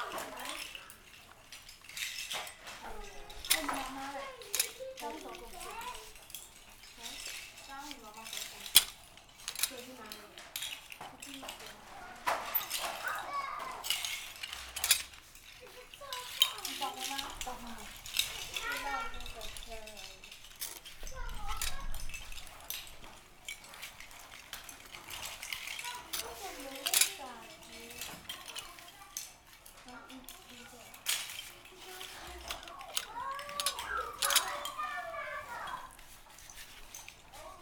Guangming Rd., Fangyuan Township - Oyster Shell
Oyster Shell, in the Small village, Children's sound, Traffic Sound
Zoom H6 MS+ Rode NT4, Best with Headphone( SoundMap20140308- 5 )